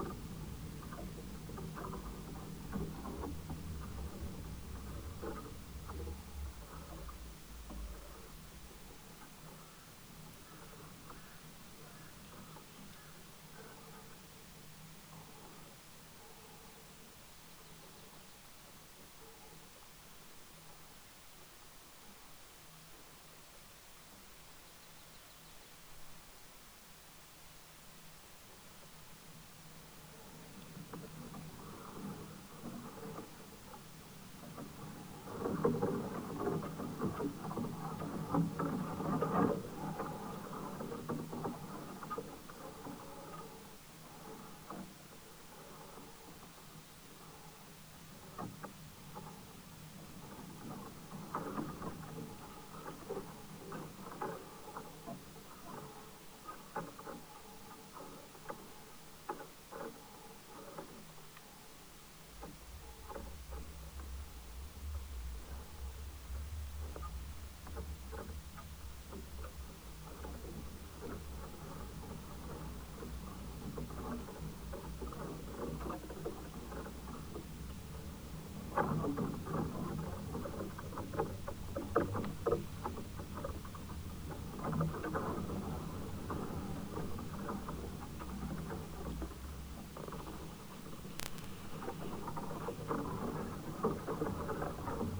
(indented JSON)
{
  "title": "shedded poplars X gusts",
  "date": "2022-01-16 14:00:00",
  "description": "...leafless poplars in 3-4ms gusts...",
  "latitude": "37.85",
  "longitude": "127.75",
  "altitude": "125",
  "timezone": "Asia/Seoul"
}